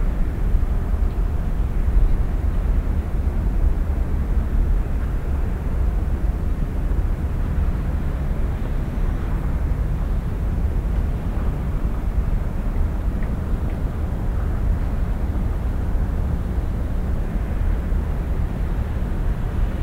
cologne, rhein, rheinauhafen, zwei schiffe
project: social ambiences/ listen to the people - in & outdoor nearfield recordings